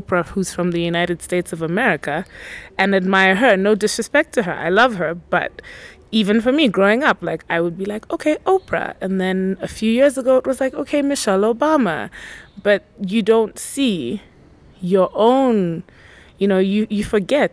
Latitude, Leopards Ln, Lusaka, Zambia - Leelee Ngwenya contributing to Wiki Women Zambia
we are in the outskirts of Lusaka, in one of these surprising villas with leafy surrounding garden… this place called “Latitude” serves a gallery, events place, hotel… here, we caught up with another woman writer who contributed to the WikiWomenZambia project, Puthumile Ngwenya aka Leelee. In our conversation, Leelee shares details about her motivation to participate in the project and what the experience has meant for her as a woman media professional in the country…
the entire interview is archived here: